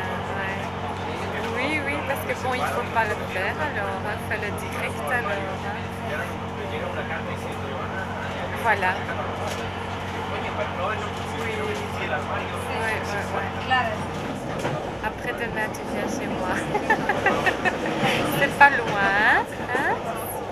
Near Schaerbeek, telephone conversation in the train